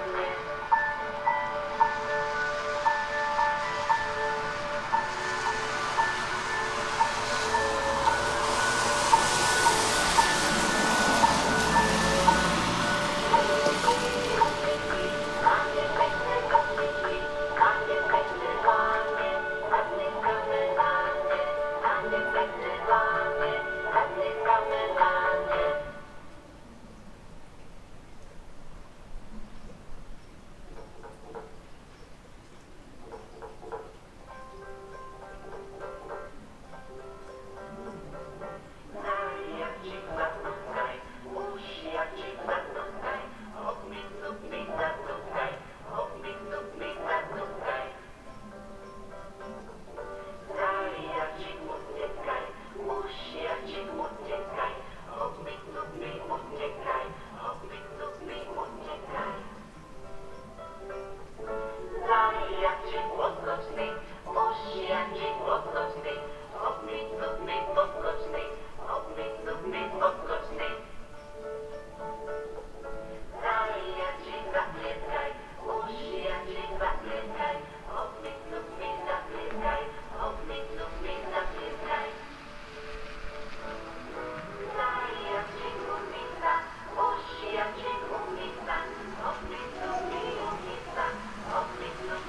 Trenčín-Kubrica, Slovenská republika - Scary Tale
Haunted by an aching fairy-tale broadcasted through the one street of the allegedly quaint hamlet, I find shelter at the local drinking den. Occasional villagers stopping by appear to confirm the premise’s role as a haven, where an ostensibly permanent special offer of Borovička for 40 cents is promising relief.
Trencin-Kubrica, Slovakia, 7 December 2013